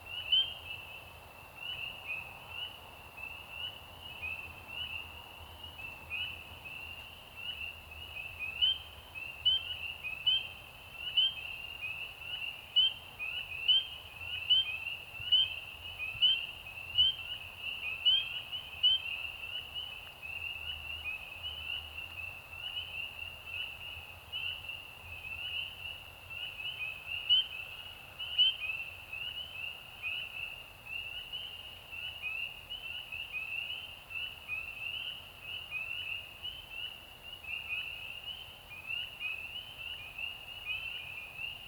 After almost a week of temperatures in the upper 50s/lower 60s, the temperature dropped and these Pseudacris crucifer chorus frogs became a little subdued by 12am on a Saturday night. For many years, this marsh has been one of several local sites for the state's annual frog and toad survey. From 1882-1902, this site bordered the roadbed for the Bear Lake & Eastern Railroad. Stereo mics (Audio-Technica, AT-822 & Aiwa CM-TS22), recorded via Sony MDs (MZ-NF810 & MZ-R700, pre-amps) and Tascam DR-60DmkII.
24 April 2016, 00:01